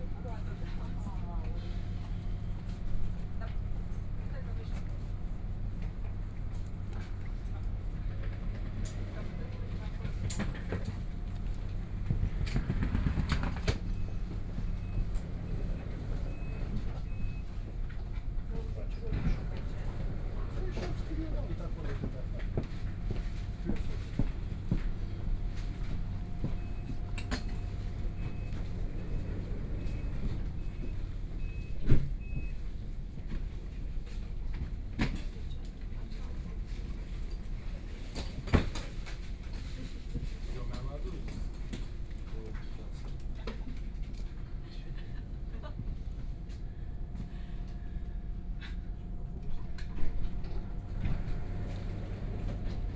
München-Flughafen, Germany - S-Bahn Munich
S Bahn Munchen, In the station platform, Into the compartment